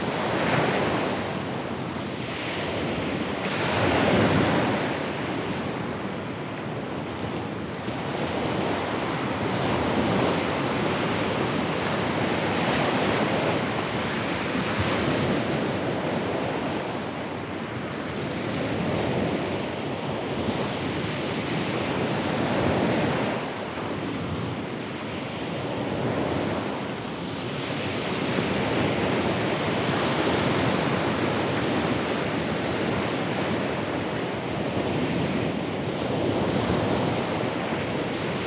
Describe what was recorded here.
Roll forward wave, sandy beach. Накат волны. Песчаный пляж.